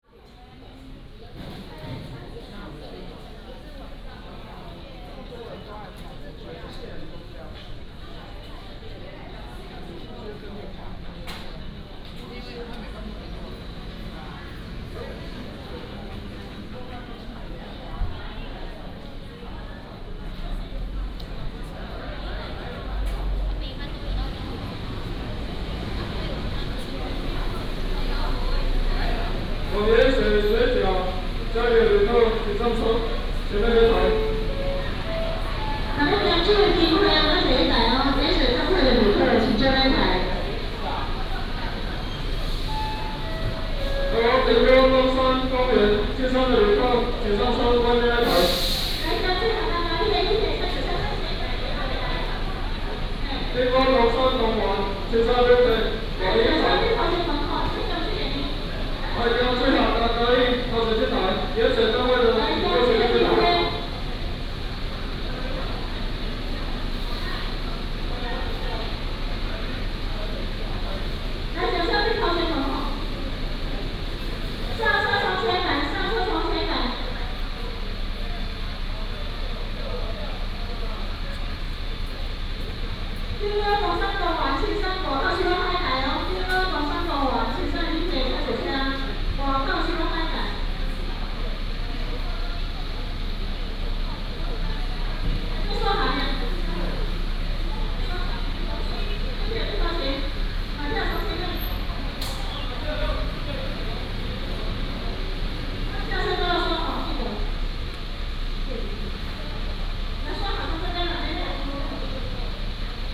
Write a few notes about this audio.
At the passenger terminal, Traffic sound, Station broadcasting